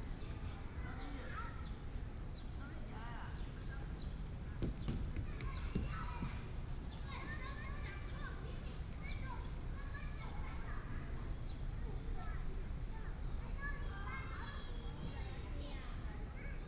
in the Park, Traffic sound, sound of birds, Child
10 April 2017, ~16:00